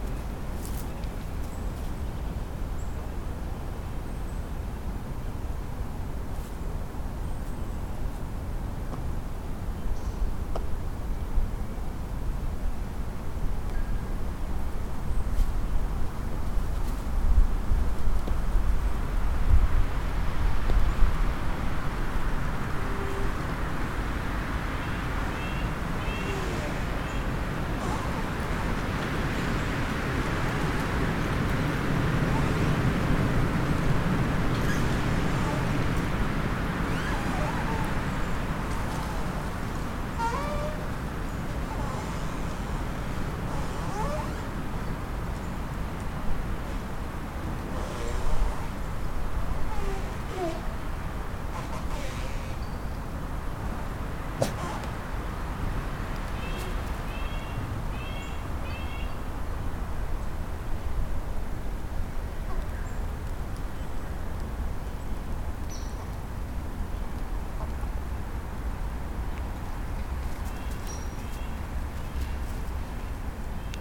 George Washington Hwy, Clayville, RI, USA - Singing tree in a windstorm
A singing tree in heavy wind. You can also hear Rhoda the puppy sniffling around, a small bell or fence from a nearby house in this otherwise very quiet nature area. It was moments before a heavy rainstorm passed through the area, very windy and you can hear some small raindrops before the deluge. Recorded with Olympus LS-10 and LOM mikroUši
Rhode Island, United States, 28 March, 11:30